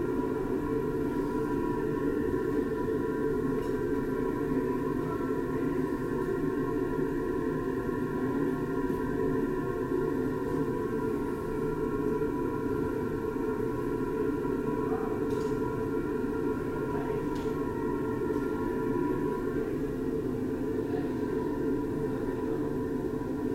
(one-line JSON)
{"title": "ferry, corridor air condition", "description": "recorded on night ferry travemuende - trelleborg, july 19 to 20, 2008.", "latitude": "54.02", "longitude": "10.95", "timezone": "GMT+1"}